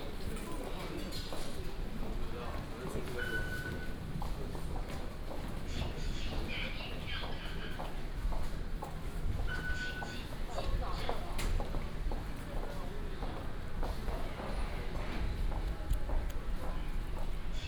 Gongguan Station, Taipei City - MRT station
Outside MRT station, Go into the station, Traffic Sound